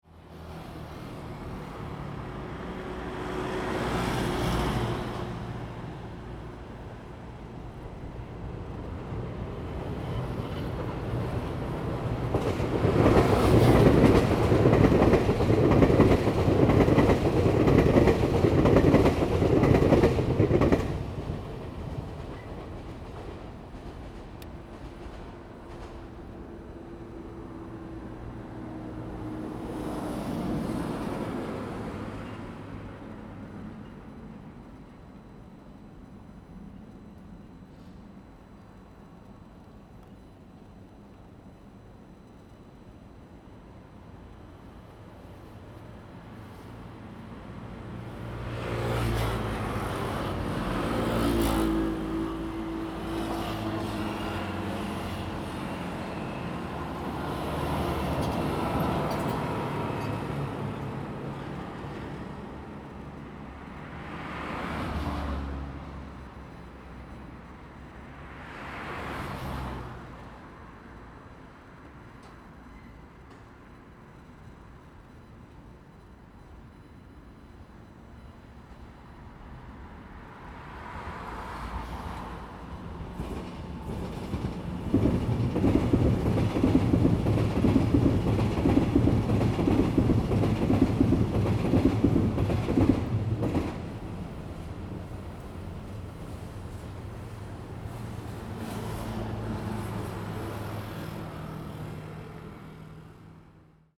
Next to the tracks, Traffic sound, the train passes by, Zoom H2n MS+XY
Yingge District, New Taipei City, Taiwan, 25 August, ~13:00